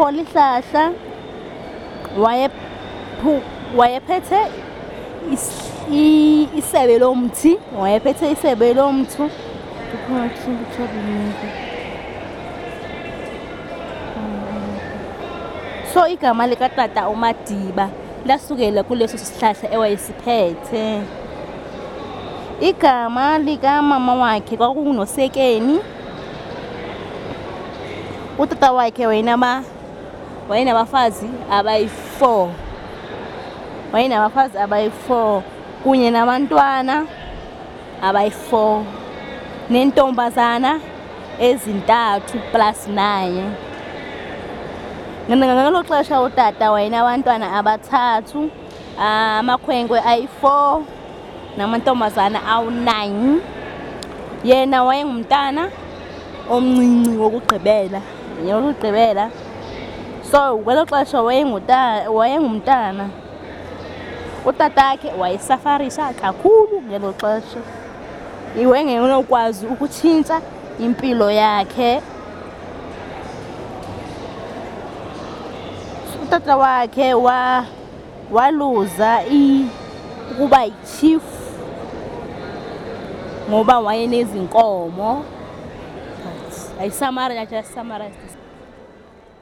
clipping from the original recordings for what became the radio piece LONG WALK abridged